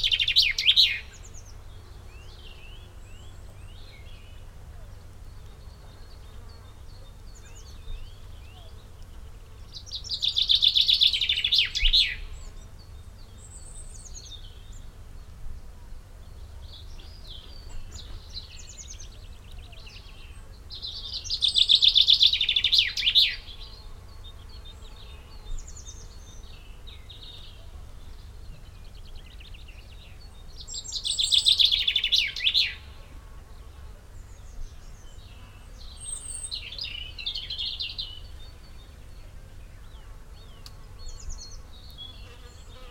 Mikro Papingo, Zagoria, Greece - of birds, bees and wing beats
Mikro Papingo, early morning, spring.... great ambience, amazing bird song and wing beats, villagers getting ready for the tourist season. Recorded in Kalliope's field using homemade SASS with primo EM 172 capsules (made by Ian Brady of WSRS) to Olympus LS 14 ....drop and collect after 6.5 hrs